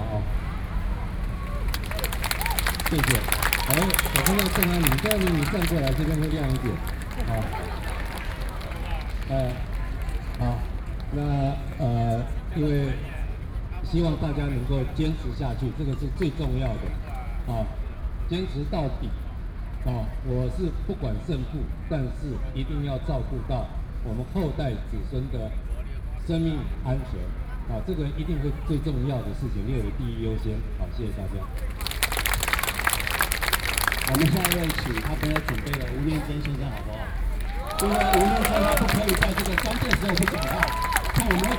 中正區 (Zhongzheng)Taipei City, Taiwan - Sounds of 'No Nuke'
中正區 (Zhongzheng), 台北市 (Taipei City), 中華民國, 15 March 2013